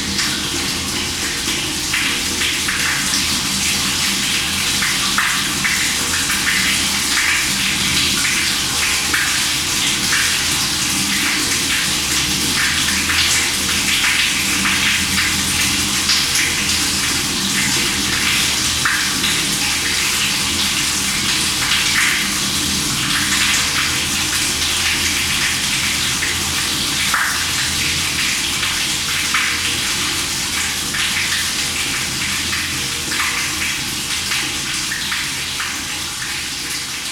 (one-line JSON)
{"title": "Tulse Hill, UK - Thames Water Manhole", "date": "2016-10-24 15:20:00", "description": "Recorded with a pair of DPA 4060s and a Marantz PMD661", "latitude": "51.44", "longitude": "-0.11", "altitude": "43", "timezone": "Europe/London"}